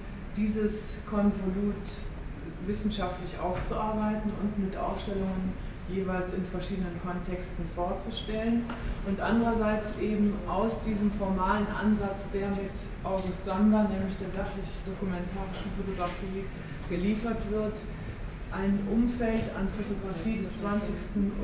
köln, mediapark, sk photographische sammlung - fotoausstellung, märz 2004 (binaural recording)
Cologne, Germany, 10 March 2004, 14:30